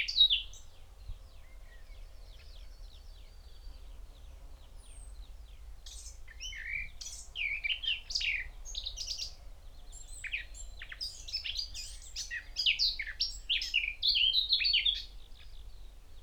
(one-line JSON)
{"title": "Malton, UK - blackcap song ...", "date": "2021-06-27 06:41:00", "description": "blackcap song ... xlr sass on tripod to zoom h5 ... bird calls ... songs ... from ... wood pigeon ... dunnock ... yellowhammer ... great tit ... skylark ... chaffinch ... extended unattended time edited recording ...", "latitude": "54.14", "longitude": "-0.55", "altitude": "126", "timezone": "Europe/London"}